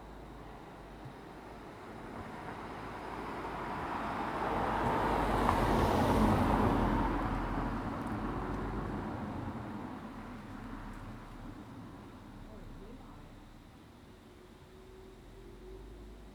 牡丹鄉199縣道4K, Mudan Township - Mountain road
Mountain road, Cicada sounds, Bicycle Society, Bird call, The voice of a distant aircraft
Zoom H2n MS+XY